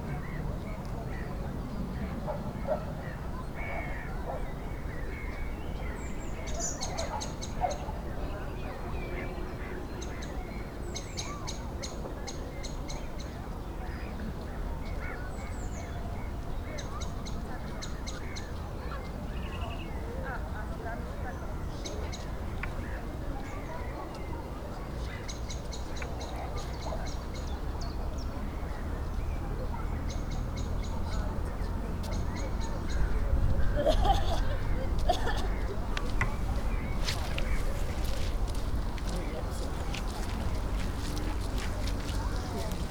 the beach was crowded this day. plenty of people talking, playing games, kids running about, dogs barking. the intense voice of the crowd was to be heard on the other bank of the lake as well. highly reverberated, muted yet a interesting sound texture. conversations of strollers and hyped birds in the park behind me.